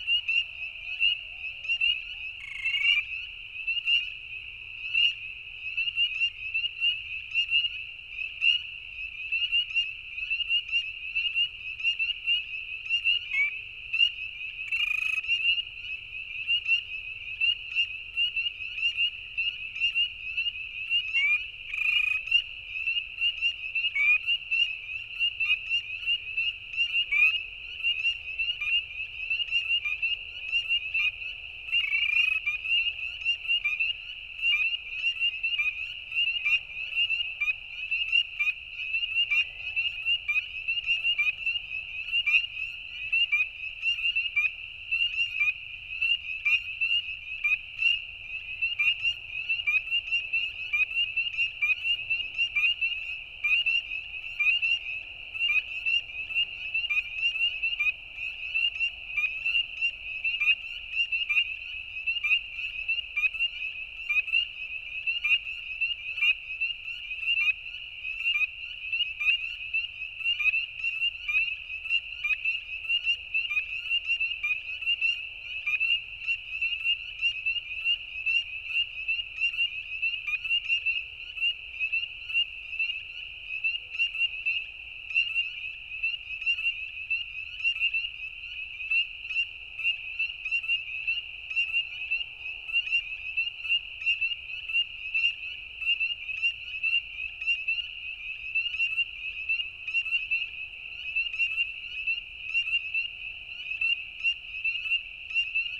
Roadside recording of spring peepers in ditch beside the road. Night recording less than 1hr after sunset. At 00:42 sec coyotes can be heard in the distance. No post processing.
ON, Canada, 25 April 2019